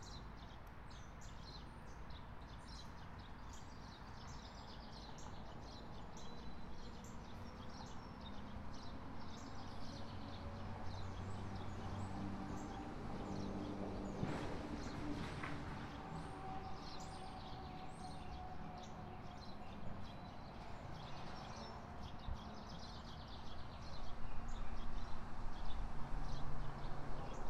S Gilbert St, Iowa City, IA, USA - Terry Trueblood trail
This recording was taken at a spot on the trail at the Terry Trueblood Recreation Area in Iowa City. This particular spot on the trail is right next to the road therefor in addition to the sounds of birds there is a decent amount of traffic sounds as well. This was recorded with a Tascam DR-100MKIII.